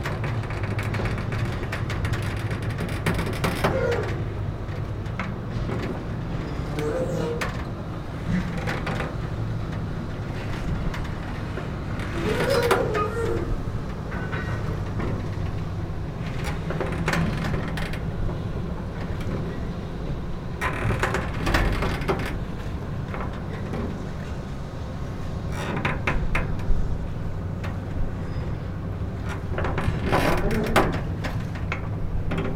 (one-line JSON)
{"title": "Almada, Portugal - Singing Metal bridge", "date": "2015-06-28 19:39:00", "description": "Metal bridge noises, scrapping, tension of metal from the water and nearby platform movements. Recorded in MS stereo with a Shure VP88 into a Tascam dr-70d.", "latitude": "38.69", "longitude": "-9.15", "altitude": "1", "timezone": "Europe/Lisbon"}